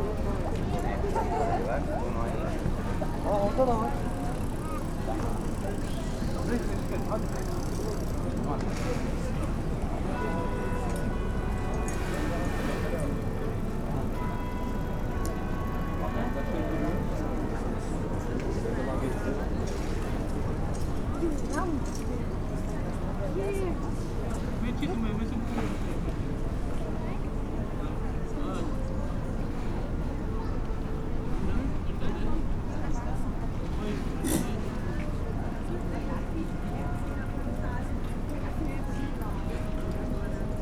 Sentrum, Oslo, Norway, street musician